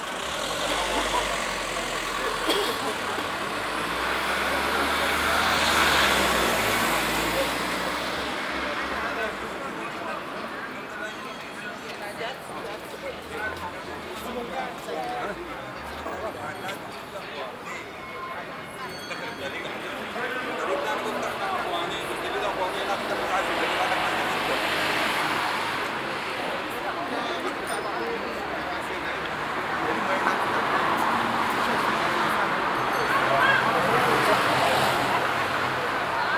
frankfurt, Rotlichtviertel, Strasse Nachtszene - frankfurt, rotlichtviertel, strasse nachtszene
kleine öffentliche auseinandersetzung in der lokalen gewerbe szene
project: social ambiences/ listen to the people - in & outdoor nearfield recordings